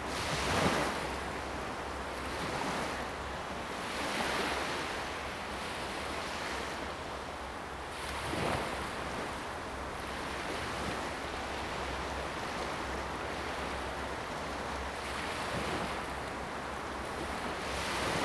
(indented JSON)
{
  "title": "蛤板灣, Hsiao Liouciou Island - Sound of the waves",
  "date": "2014-11-01 12:54:00",
  "description": "Sound of the waves, At the beach\nZoom H2n MS+XY",
  "latitude": "22.33",
  "longitude": "120.36",
  "altitude": "8",
  "timezone": "Asia/Taipei"
}